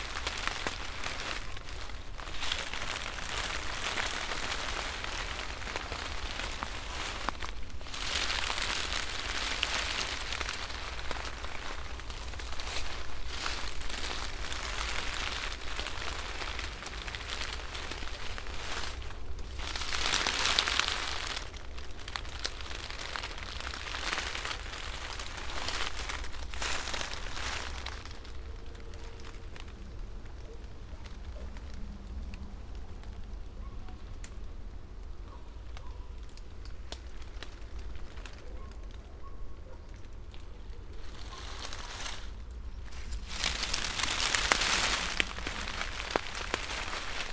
aspen, Šturmovci, Slovenia - slow steps
dry leaves of an aspen on high soft grass
Markovci, Slovenia, September 2012